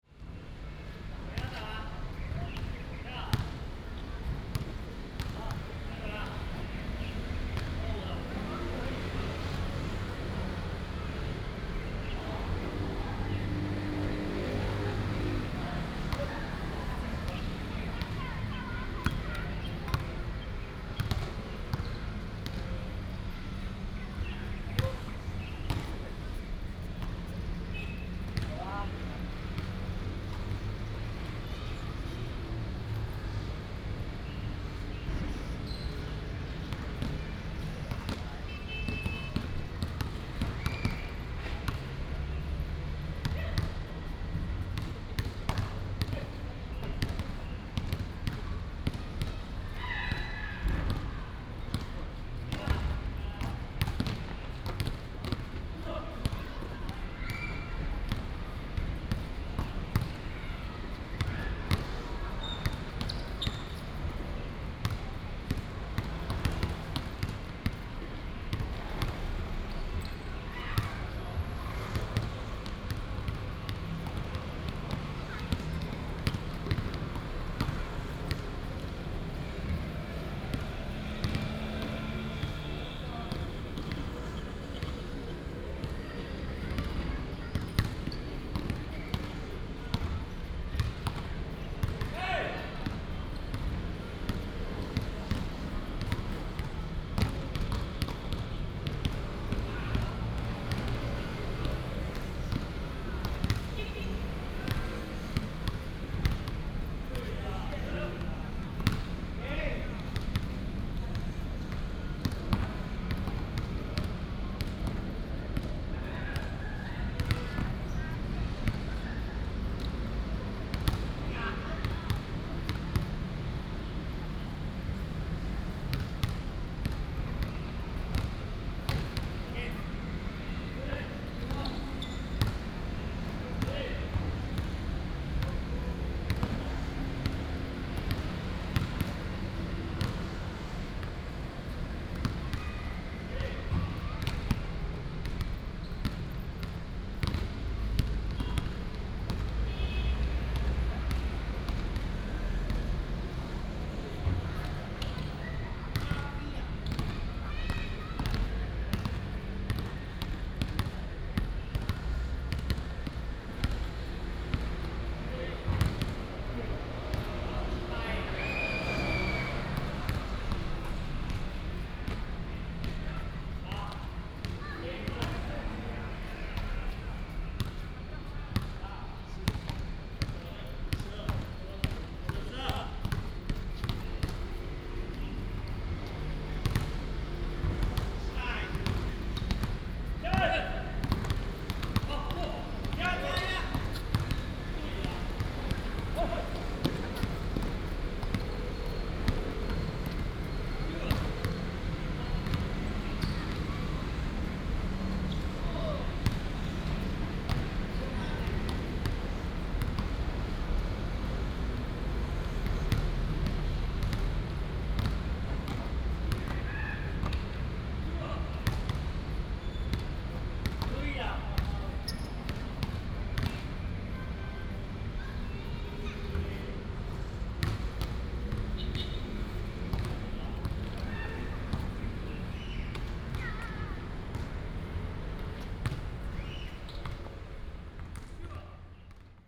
In the park, Basketball court, Birds sound, Traffic sound
Taoyuan City, Taiwan, 5 July